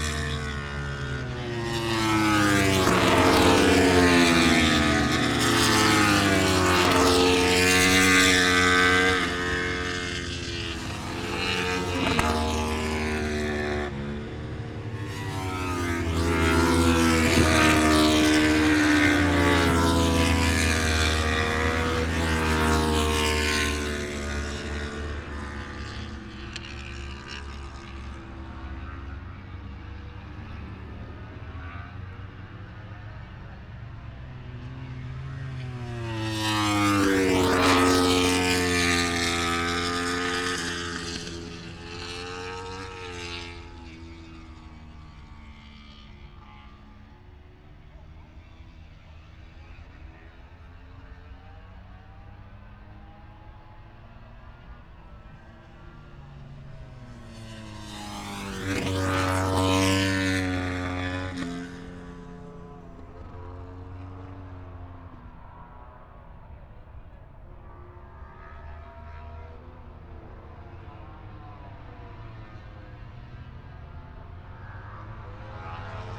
Silverstone Circuit, Towcester, UK - British Motorcycle Grand Prix 2017 ... moto grand prix ...
moto grand prix ... qualifying two ... open lavaliers clipped to chair seat ...
26 August 2017, 14:35